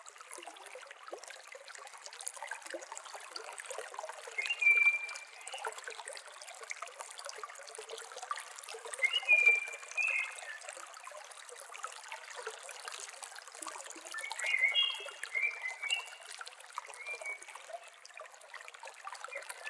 Podmelec, Most na Soči, Slovenia - Simple Valley Stream Water Sounds and Bird Singing
Field recording in the valley of pure stream water sounds and bird singing.
Slovenija, 17 July 2016, ~11:00